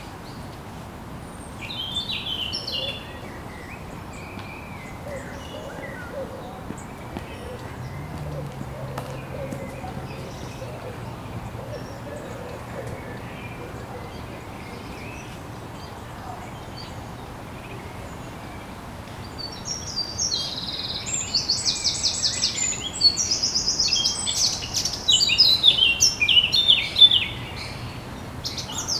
Rue des Amidonniers, Toulouse, France - Amidonniers Birds
birds, bicycle, walker
in the background the sound of the river, road and city
Captation : ZOOMH4n
France métropolitaine, France, 7 May 2022